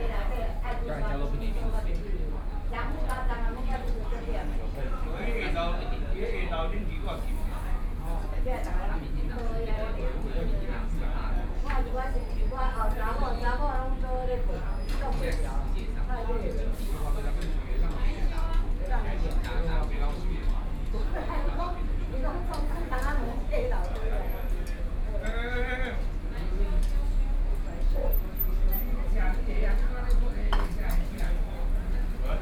Linsen S. Rd., Taipei City - In the restaurant
In the restaurant
Binaural recordings